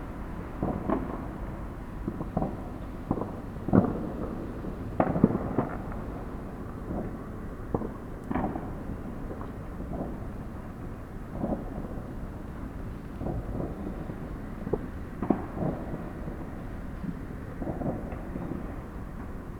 Lange Str., Hamm, Germany - last day of year
listening out to the bon fires at open and closed attic window